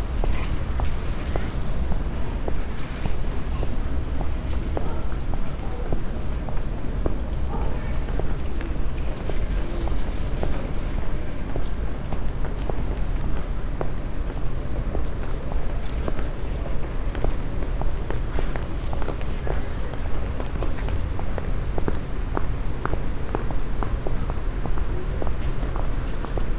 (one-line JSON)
{"title": "cologne, mediapark, gang zwischen gebäuden", "date": "2008-09-19 19:03:00", "description": "gang auf steinboden zwischen halligen steinverkleideten höheren neubauten\nsoundmap nrw:\nprojekt :resonanzen - social ambiences/ listen to the people - in & outdoor nearfield recordings", "latitude": "50.95", "longitude": "6.95", "altitude": "52", "timezone": "Europe/Berlin"}